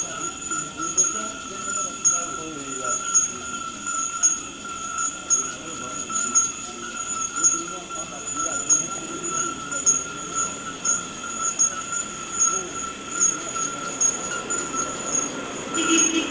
Unnamed Road, Kpando, Ghana - little bush near market with tree frogs
little bush near market with tree rogs
26 June 2004